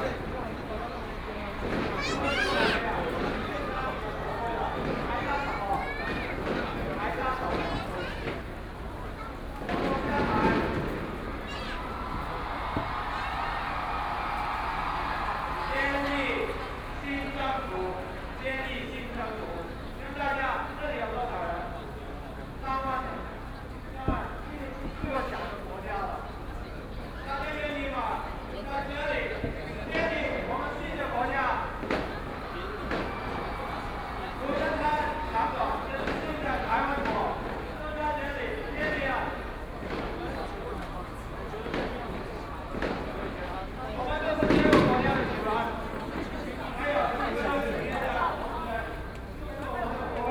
Qingdao E. Rd., Taipei City - occupied the Legislative Yuan
Walking through the site in protest, People and students occupied the Legislative Yuan
Binaural recordings